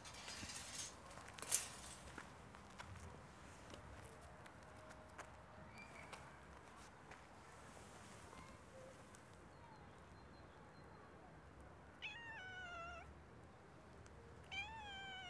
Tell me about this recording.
dragging a long, twisted, found on a nearby construction site steel tape on the paved road. suddenly a young cat ran out from the site interested in the moving tape, chasing it and meowing.